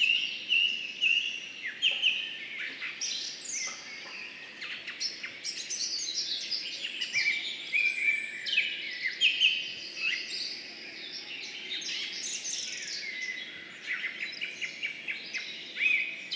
morning birds, Ahja forest
spring bird chorus
Põlvamaa, Estonia, 8 June, ~02:00